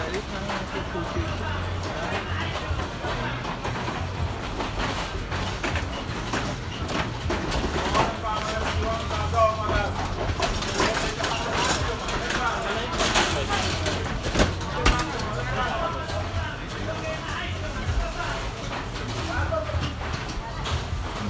Tehran Province, Tehran, Sayyed Vali, Iran - Grand Bazaar Wagons
Bāzār e Bozorg - Grand Bazaar of Tehran is probably the biggest roofed bazaar in the world - a maze with about 10km of corridors.
Hand pulled carts are the most common method of transporting good within the bazaar.